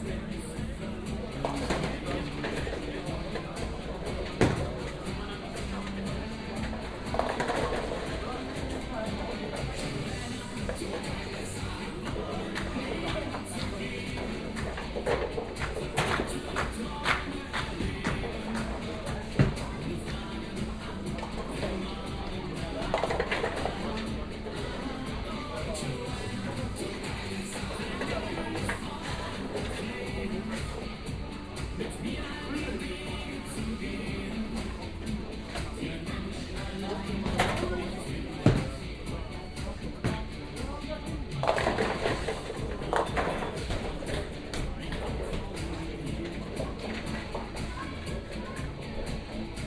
sa, 14.06.2008, 17:50, bowlingcenter am alex, schlagermusik, kugeln und kegel